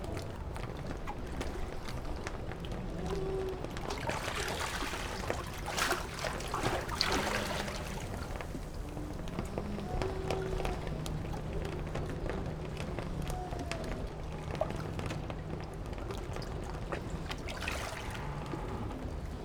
岐頭碼頭, Baisha Township - In the dock
In the dock
Zoom H6+Rode NT4
22 October 2014, ~11:00